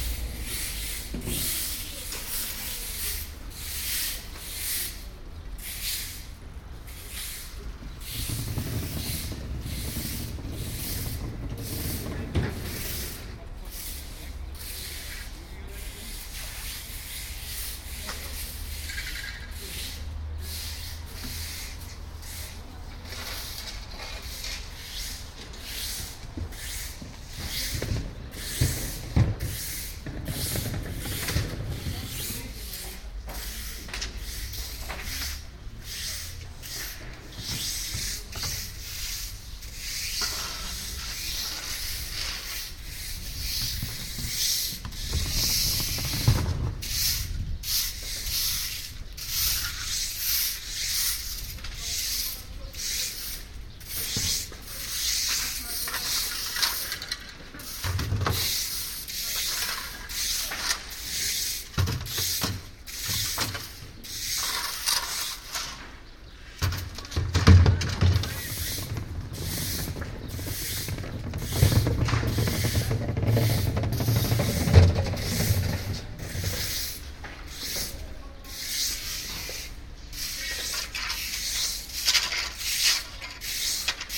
Maybachufer, weekly market - cleanup after market
weekly market at maybachufer, berlin, 13.06.2008, 19:50. after the market, workers scratching resistant dirt from the streets with iron rakes.
Berlin, Deutschland